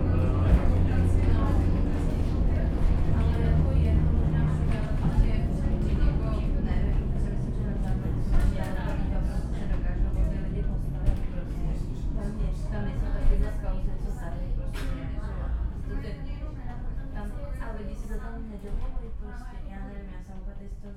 Praha, Petřín funicular
compete ride downwards
Prague-Prague, Czech Republic, June 2011